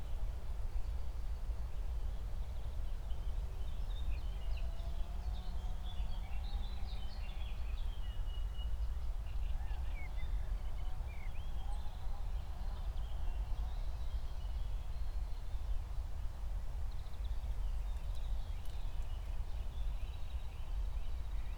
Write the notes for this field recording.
09:00 Berlin, Buch, Mittelbruch / Torfstich 1